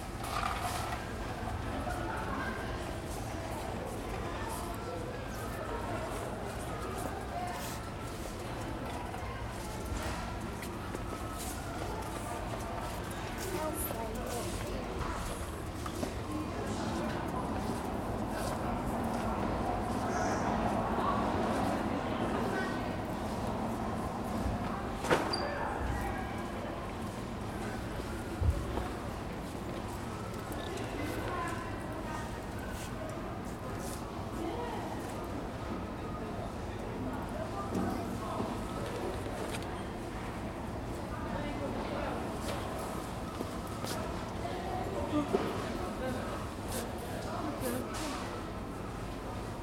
Sainsbury's, Southampton, UK - 021 In the biscuit isle
21 January, ~20:00